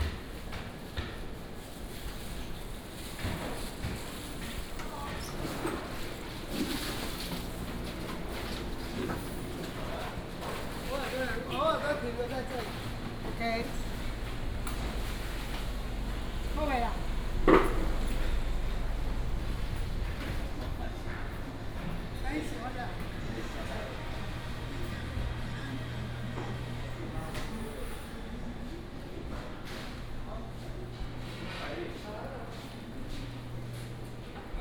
大展市場, Wugu Dist., New Taipei City - Traditional market
Preparing for market operation, Traditional market
Wugu District, 水碓路7-9號, 6 May